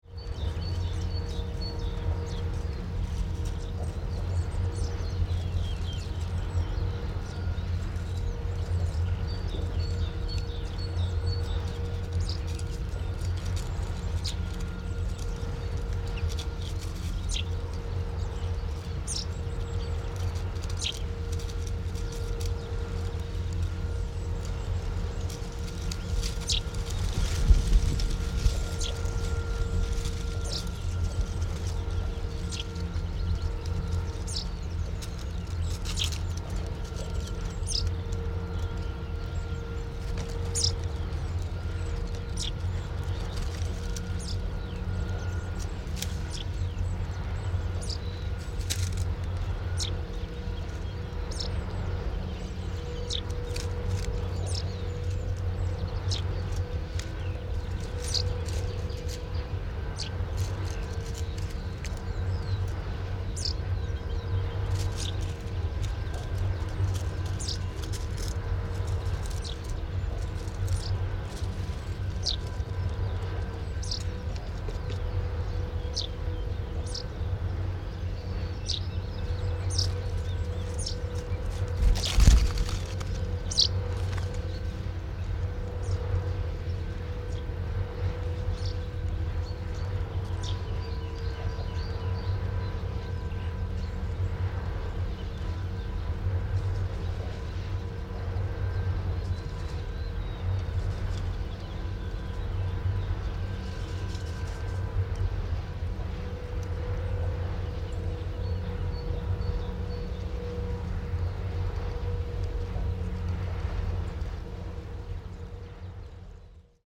One advantage of an audio stream is the mics are left alone - no humans are present. This means that wildlife sometimes comes very close and maybe even investigates. One hears this happening without really knowing who is there. It could be a falling leaf, a hungry mouse, a breath of wind or a fluttering bird.
Brandenburg, Deutschland